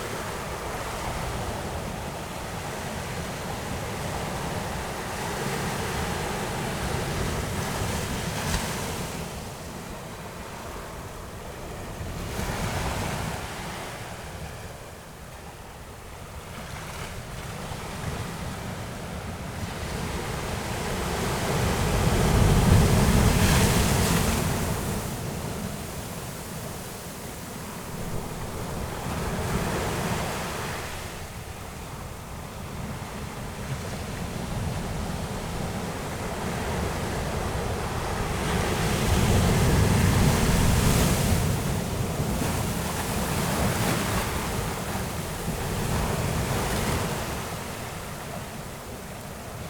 Laguna Verde, Región de Valparaíso, Chile - pacific ocean, waves
Laguna Verde, near Valparaiso, Chile. Sound of the waves and water flowing back over the sand, recorded near a power station
(Sony PCM D50, DPA4060)